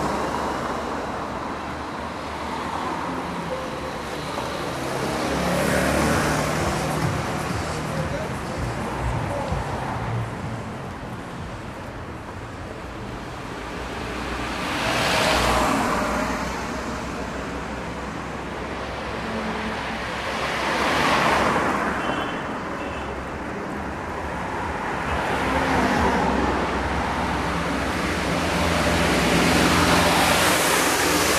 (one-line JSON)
{"title": "Fullmoon Nachtspaziergang Part XII", "date": "2010-10-23 22:48:00", "description": "Fullmoon on Istanbul, walking down to Osmanbey. Getting tired of walking, sitting down on a table at the Café Prestij, making oneself understood to order a beer, scenic view on the crossroads. End of the walk.", "latitude": "41.05", "longitude": "28.99", "altitude": "107", "timezone": "Europe/Istanbul"}